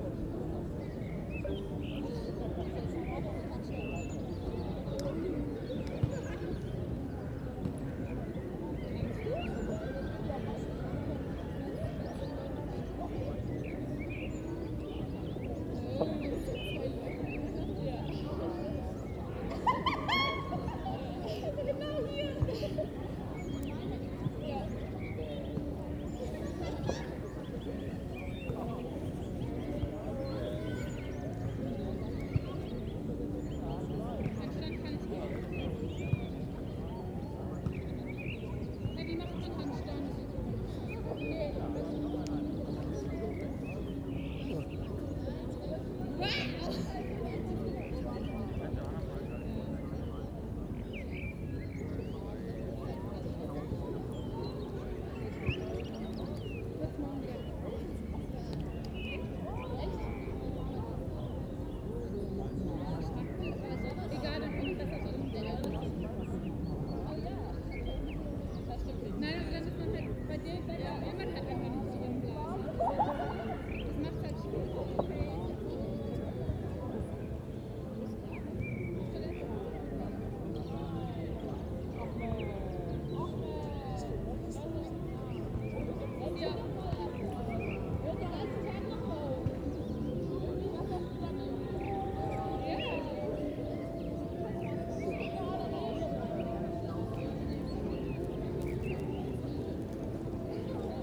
{
  "title": "Palace Park, Am Schloßpark, Berlin, Germany - Beautiful sun, closed schools: kids relaxing in the park",
  "date": "2020-03-18 15:15:00",
  "description": "Covid-19 has closed Berlin schools and the springtime weather is beautiful. Kids are relaxing in the parks, enjoying the sunshine, sitting around in small and large groups, playing ball games, dancing to musics on their phones, sharing jokes, drinks and maybe even viruses. Amazing how Berliners are so good at turning a crisis into a party.\nThe pandemic is also having a noticeable effect on the city's soundscape. This spot is directly under the flight path into Tegel airport. Normally planes pass every 3 or 4 minutes. Now it's about 10 minutes. Traffic is less. The improvement in sonic clarity and distance hearing is very pleasant.",
  "latitude": "52.58",
  "longitude": "13.41",
  "altitude": "47",
  "timezone": "Europe/Berlin"
}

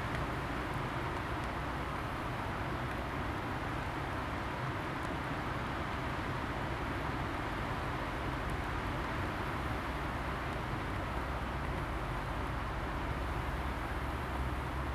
{
  "title": "馬山, Jinsha Township - Forest and Wind",
  "date": "2014-11-03 12:42:00",
  "description": "Forest and Wind, next to the parking\nZoom H2n MS+XY",
  "latitude": "24.53",
  "longitude": "118.41",
  "altitude": "5",
  "timezone": "Asia/Taipei"
}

